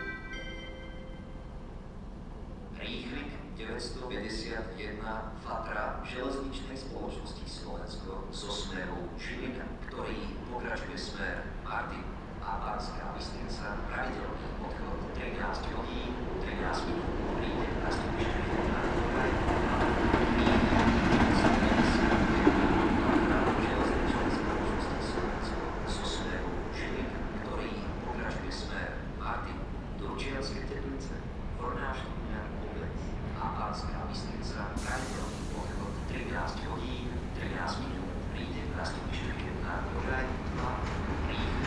Železničná, Vrútky, Slovakia - Activity at Vrútky Train Station
A short clip capturing activity at Vrútky train station. Trains passing and stopping at the station, automated PA announcements, diesel enging idling, diesel locomotive passing.
Stredné Slovensko, Slovensko, 7 November 2020, ~13:00